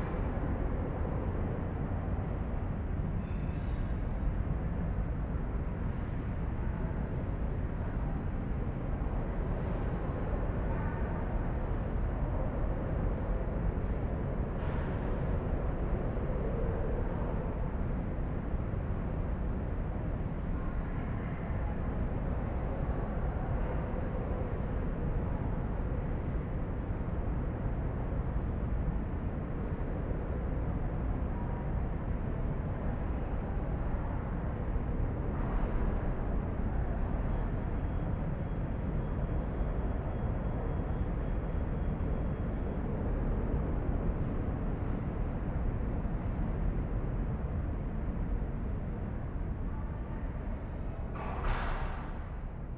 Mannesmannufer, Düsseldorf, Deutschland - Düsseldorf, KIT, exhibition hall, tube entry
Inside the under earth exhibition hall near the entry. The sound of the Rheinufertunnel traffic reverbing in the tube like architecture construction. Also to be heard: sounds from the cafe kitchen above.
This recording is part of the intermedia sound art exhibition project - sonic states
soundmap nrw - sonic states, social ambiences, art places and topographic field recordings
Düsseldorf, Germany, 22 November